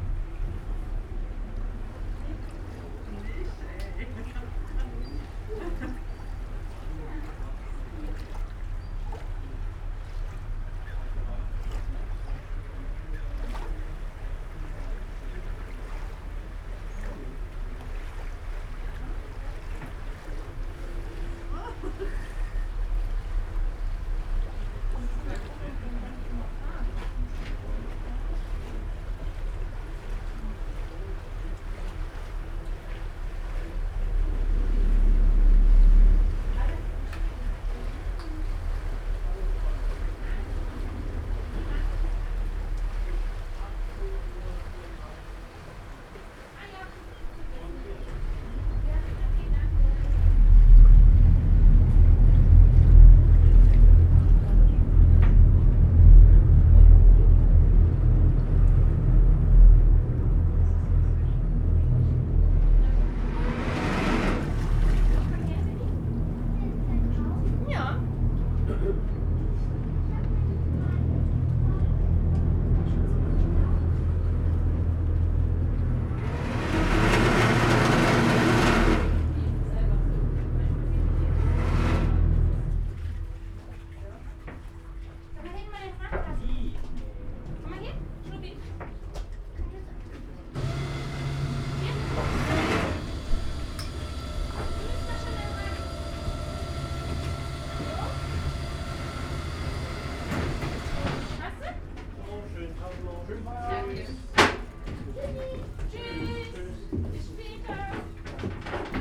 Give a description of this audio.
Berlin, Plänterwald, river Spree, crossing the river on a public transport ferry boat. (Sony PCM D50, DPA4060)